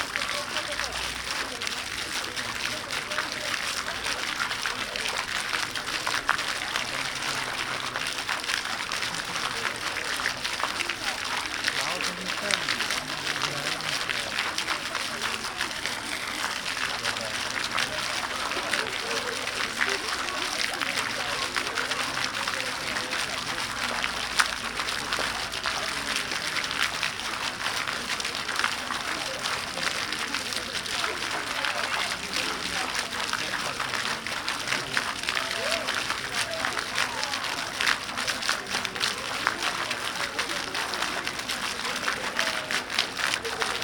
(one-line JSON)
{"title": "Łazienki Królewskie, Warszawa, Pologne - Fontanna Pałac na Wodzie", "date": "2013-08-18 18:26:00", "description": "Fontanna Pałac na Wodzie w Łazienki Królewskie, Warszawa", "latitude": "52.21", "longitude": "21.04", "altitude": "87", "timezone": "Europe/Warsaw"}